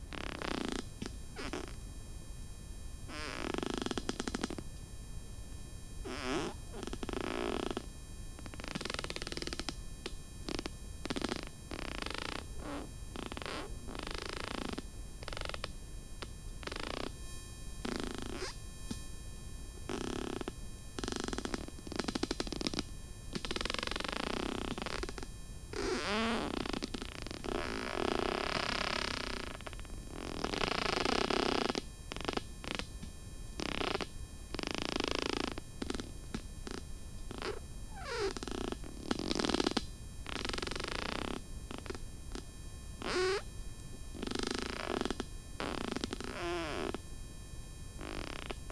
workum, het zool: marina, berth h - the city, the country & me: marina, sailing yacht, fender
contact mic on fender
the city, the country & me: july 9, 2011